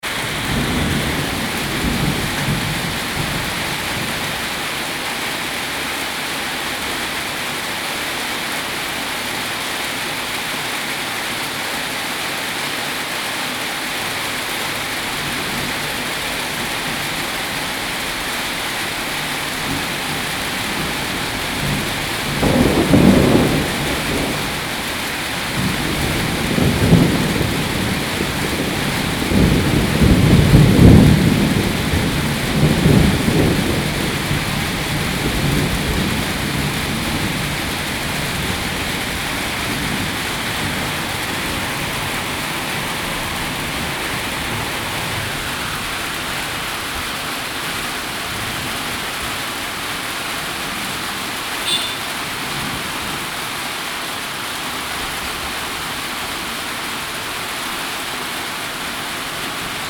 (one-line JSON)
{"title": "Calle 29 nro 16A-47 - LLUVIA Y TRUENOS MOCOA 05 ABRIL 2019", "date": "2019-04-05 22:10:00", "description": "Llueve en Mocoa. A dos años de la avalancha que, según cifras oficiales registró más de 350 muertos, y que según las comunidades podrían haber llegado a más de 1.000. Dos años en donde cada vez que llueve de esta manera, la gente no duerme, el trauma persiste. 45 días sin suministro de agua.", "latitude": "1.16", "longitude": "-76.65", "altitude": "652", "timezone": "America/Bogota"}